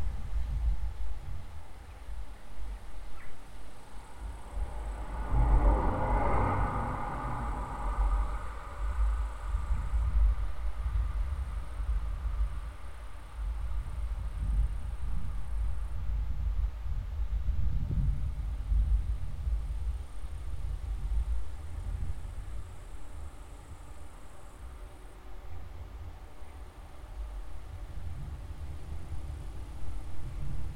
Utena, Lithuania, under the viaduct
conventional omni mics plus contact mic